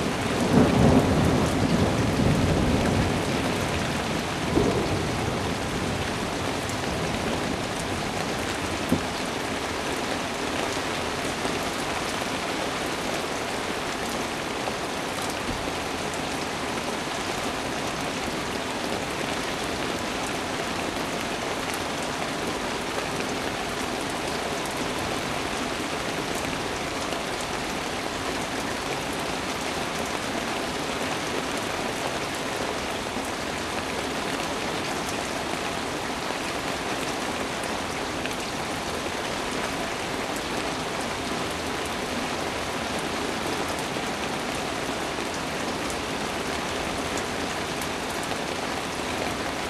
Lyon, Rue Neyret, on a rainy day
Tech Note : Sony ECM-MS907 -> Minidisc recording.
Lyon, France